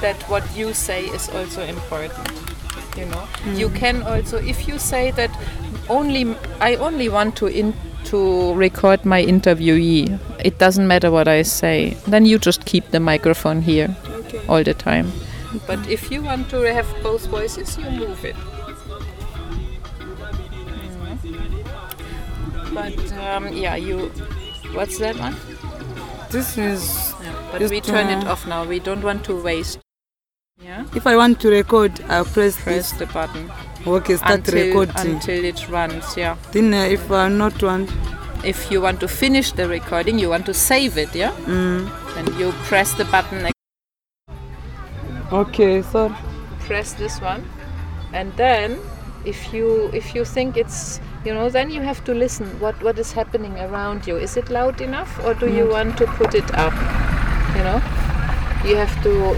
Bus station, Binga, Zimbabwe - audio training with Donor in the car
I'm sharing a speed training in binaural recording with Donor still in the car at the bus station. Together with the experienced ilala trainer Notani Munkuli they were about to take off for the rural areas in Chinonge for a weavers workshop. Donor would be documenting the training for us while also participating in the workshop...
20 September, ~16:00